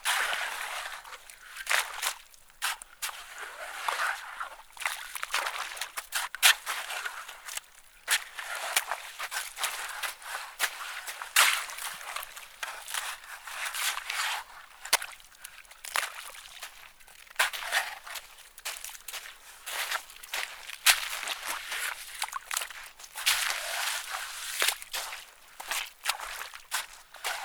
{"title": "Keeler, CA, USA - Shoveling in Owens Lake bacterial pond", "date": "2022-08-24 19:00:00", "description": "Metabolic Studio Sonic Division Archives:\nShoveling in bacterial pond on Owens Lake. Recorded with Zoom H4N recorder", "latitude": "36.42", "longitude": "-117.91", "altitude": "1084", "timezone": "America/Los_Angeles"}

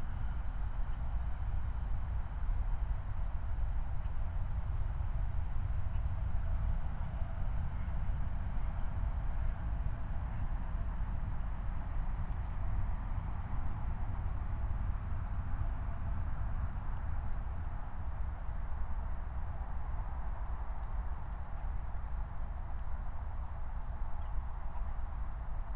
{"title": "Moorlinse, Berlin Buch - near the pond, ambience", "date": "2020-12-22 17:33:00", "description": "17:33 Moorlinse, Berlin Buch", "latitude": "52.64", "longitude": "13.49", "altitude": "50", "timezone": "Europe/Berlin"}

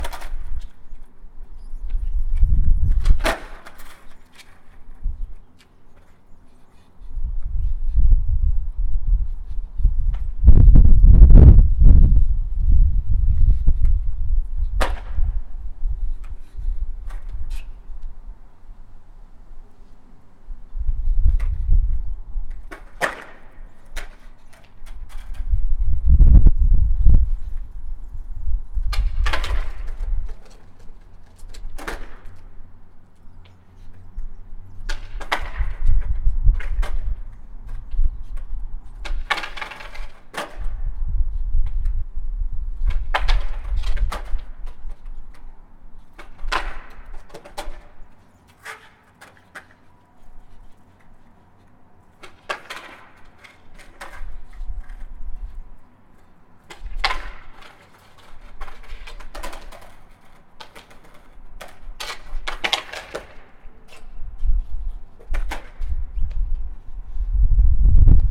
{"title": "Müllheimer Str., Weil am Rhein, Deutschland - Skating", "date": "2019-05-05 13:07:00", "description": "Junger Skater vor dem Feuerwehrhaus / Zaha Hadid / Vitra / Weil am Rhein", "latitude": "47.60", "longitude": "7.61", "altitude": "267", "timezone": "GMT+1"}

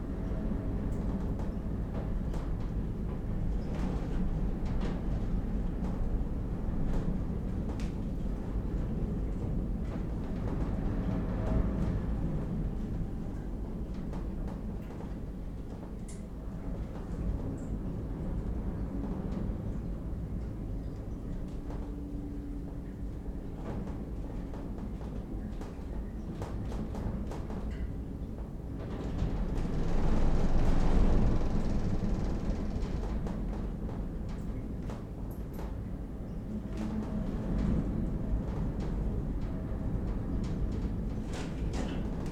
{"title": "Wind under the roof, Riga, Latvia", "date": "2012-03-03 10:30:00", "description": "roof bucking sounds from high winds", "latitude": "56.95", "longitude": "24.07", "altitude": "4", "timezone": "Europe/Riga"}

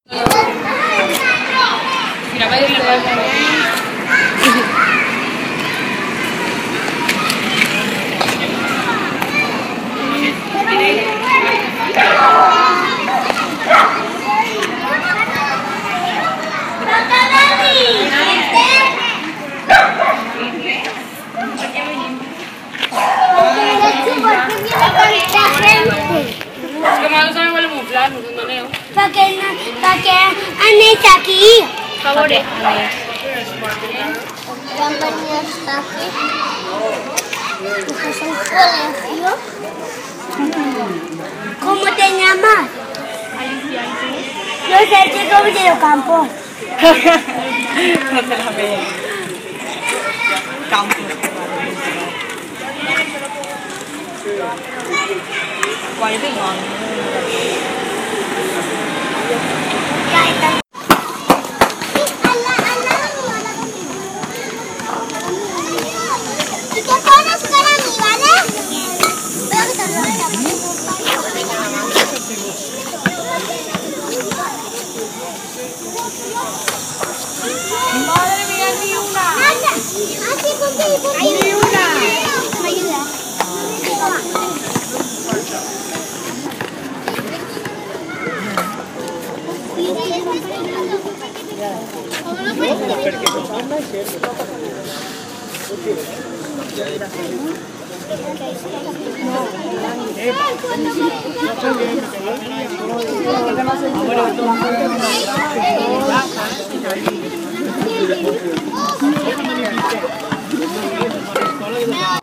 {"title": "Monserrat, Valencia, España - Escoleta Infantil", "date": "2015-07-28 11:00:00", "description": "Guardería infantil, niños jugando en una escuela de verano.", "latitude": "39.36", "longitude": "-0.60", "altitude": "172", "timezone": "Europe/Madrid"}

